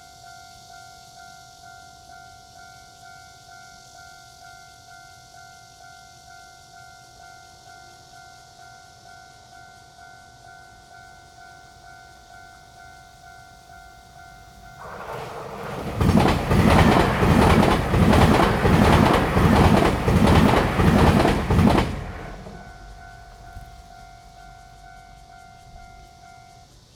Jiadong Rd., Bade Dist. - the ground 3
Next to the railroad track, Cicada and Traffic sound, for World Listening Day 2017
Zoom H2n MS+XY
18 July 2017, ~16:00